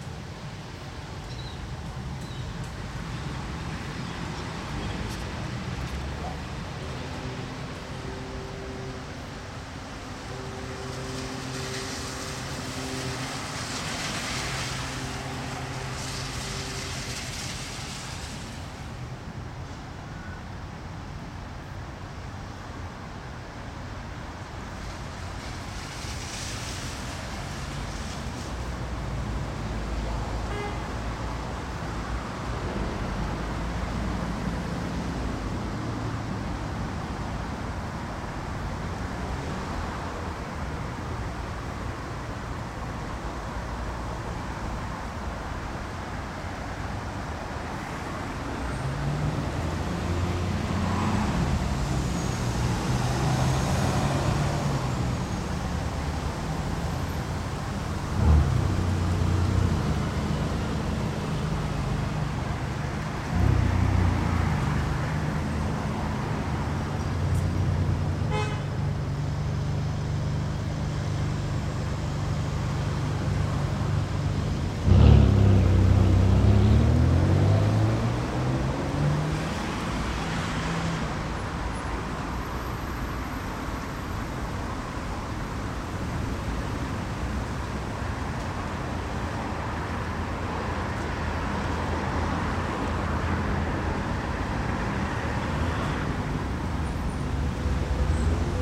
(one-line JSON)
{"description": "a 24 hour soundscape of this intersection, where gentrification is visible as well as audiable", "latitude": "53.55", "longitude": "9.96", "altitude": "17", "timezone": "Europe/Berlin"}